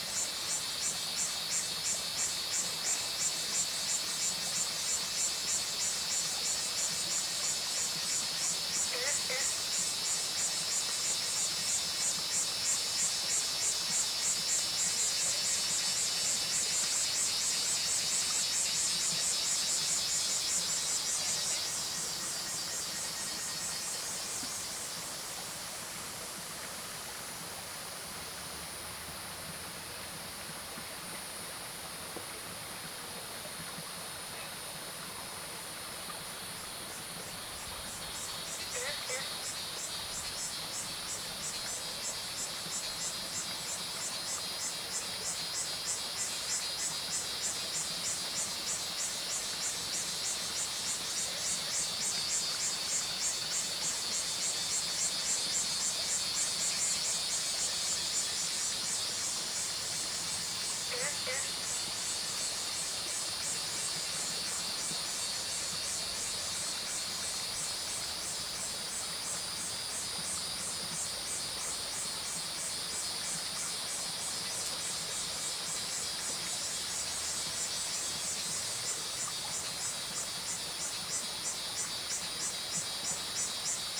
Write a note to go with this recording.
Cicadas called, Stream sound, Frogs called, Zoom H2n MS+XY